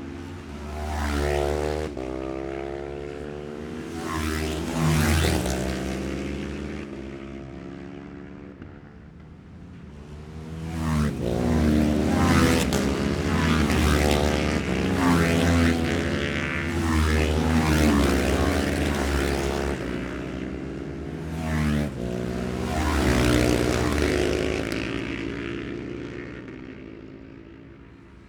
{"title": "Jacksons Ln, Scarborough, UK - gold cup 2022 ... lightweight and 650 twins ... qualifying ...", "date": "2022-09-16 13:10:00", "description": "the steve henshaw gold cup 2022 ... lightweight and 650 twins qualifying ... dpa 4060s clipped to bag to zoom f6 ...", "latitude": "54.27", "longitude": "-0.41", "altitude": "144", "timezone": "Europe/London"}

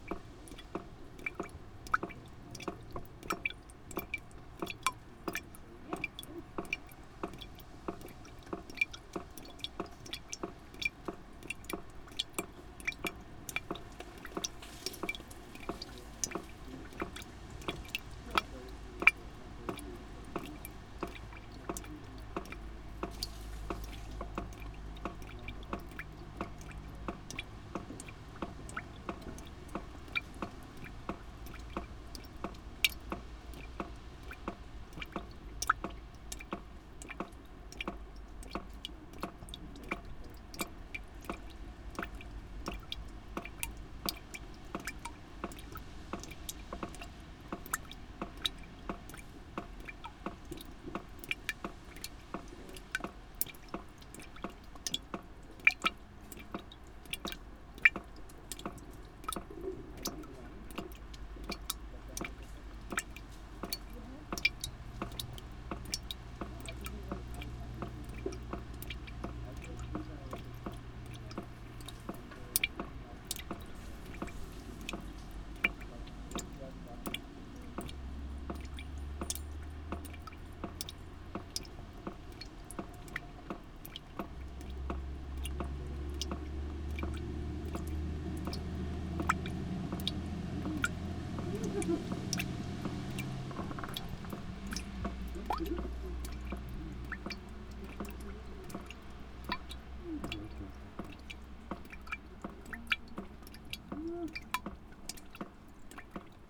{"title": "berlin, schwarzer kanal, bucket - berlin, schwarzer kanal, bucket", "date": "2011-08-04 16:22:00", "description": "water drops in another bucket, people, construction", "latitude": "52.48", "longitude": "13.46", "altitude": "38", "timezone": "Europe/Berlin"}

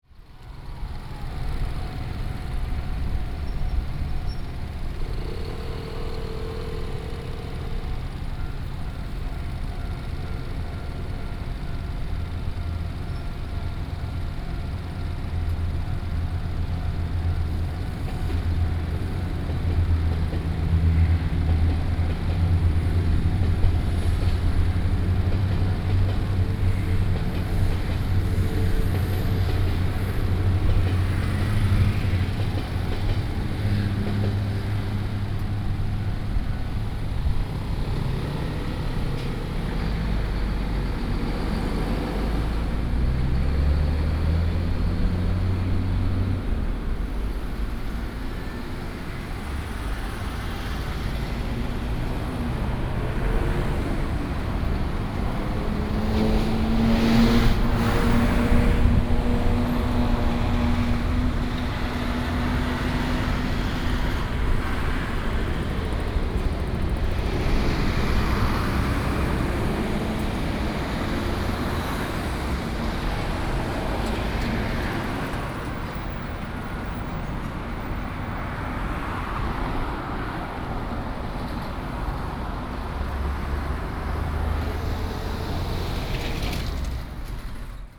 {"title": "枋寮鄉中山路, Pingtung County - the railway level crossing", "date": "2018-04-24 11:56:00", "description": "In front of the railway level crossing, traffic sound, Train passing", "latitude": "22.37", "longitude": "120.59", "altitude": "5", "timezone": "Asia/Taipei"}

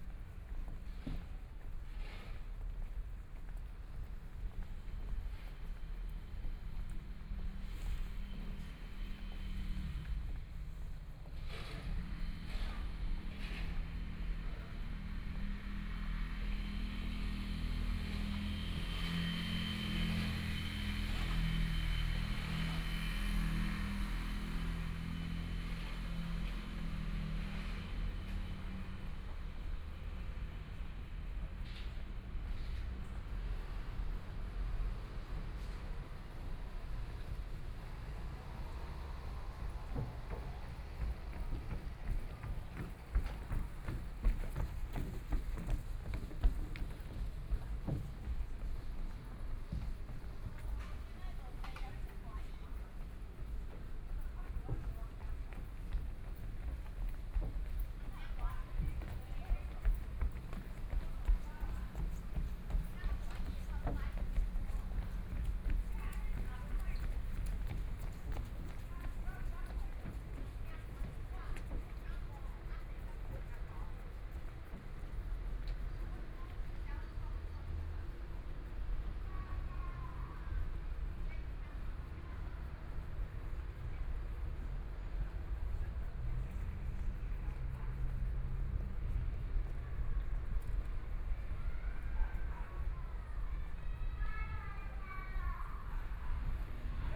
{"title": "Taitung City, Taiwan - Walking on abandoned railroad tracks", "date": "2014-01-16 17:33:00", "description": "Walking on abandoned railroad tracks, Currently pedestrian trails, Dogs barking, Garbage truck music, Bicycle Sound, People walking, Binaural recordings, Zoom H4n+ Soundman OKM II ( SoundMap2014016 -22)", "latitude": "22.76", "longitude": "121.14", "timezone": "Asia/Taipei"}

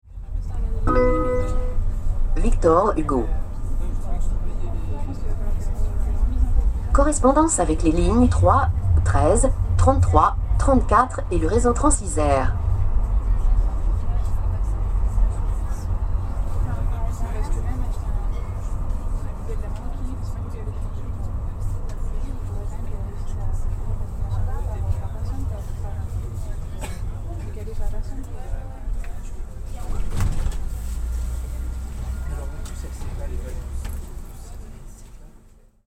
{"title": "Agn s at work Victor Hugo RadioFreeRobots", "latitude": "45.19", "longitude": "5.72", "altitude": "217", "timezone": "GMT+1"}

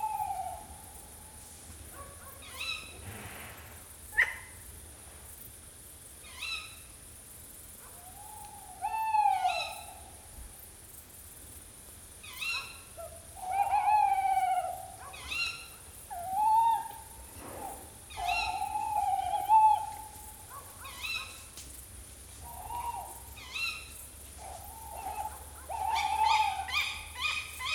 {"title": "Le Haut du Vey, Le Vey, France - Sonic ballet of tawny owls near the falaises.", "date": "2021-09-16 21:00:00", "description": "Quiet night near the falaise of Swiss Normandy.\nJecklin Disc\nLOM Usi Pro\ntascam DR 100 MK3", "latitude": "48.91", "longitude": "-0.46", "altitude": "135", "timezone": "Europe/Paris"}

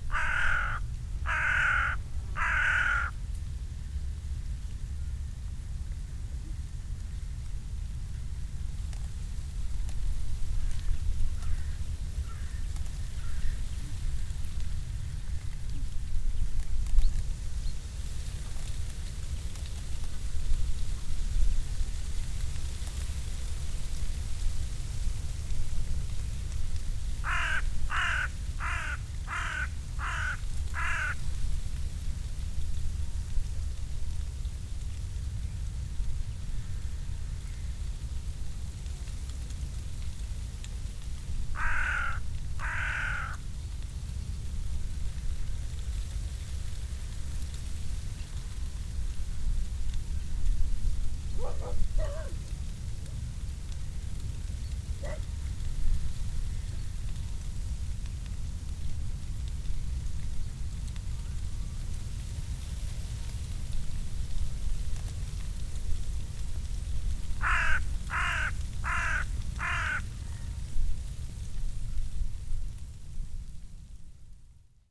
{"title": "Großziethen, Schönefeld - field ambience", "date": "2014-09-28 12:05:00", "description": "ambience on the open field between Berlin Gropiusstadt and Schönefeld airport. a permanent hum of aircrafts is in the air.\n(Sony PCM D50, DPA4060)", "latitude": "52.42", "longitude": "13.47", "altitude": "42", "timezone": "Europe/Berlin"}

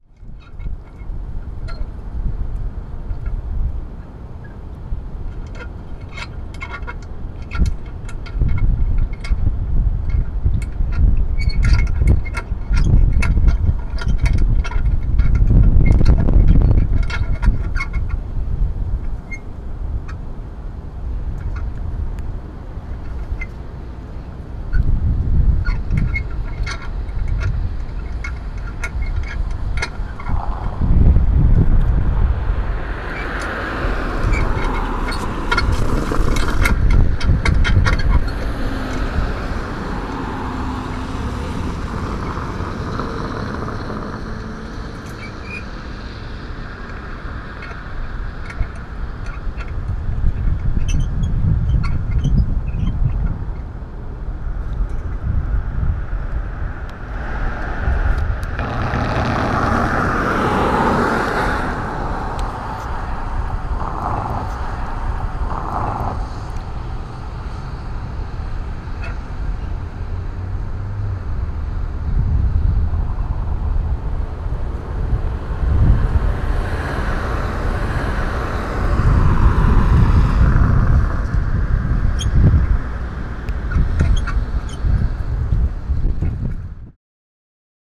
{"title": "Muhlenberg College Hillel, West Chew Street, Allentown, PA, USA - The Flagpole Street", "date": "2014-12-08 09:40:00", "description": "This recording took place on Chew Street directly under one of the Muhlenberg Flag poles with the recorder as close to the pole as possible without touching it. There is clanking metallic noises as well as the movement of the flag being blown by the wind, and occasional cars passing by on the cobblestone crosswalk nearby.", "latitude": "40.60", "longitude": "-75.51", "altitude": "120", "timezone": "America/New_York"}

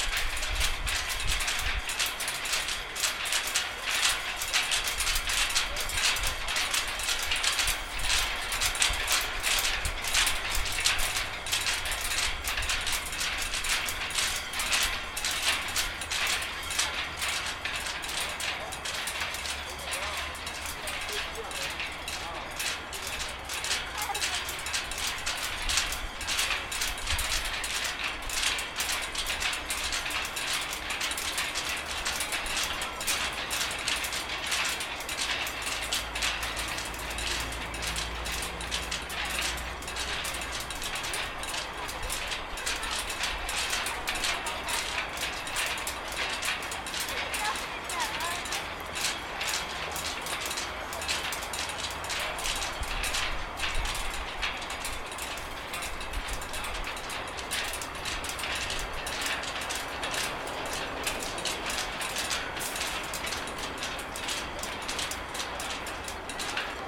{"title": "hotel, beijing", "date": "2010-04-02 17:45:00", "description": "flapping, cords, beijing, hotel, flags", "latitude": "39.91", "longitude": "116.40", "altitude": "51", "timezone": "Asia/Shanghai"}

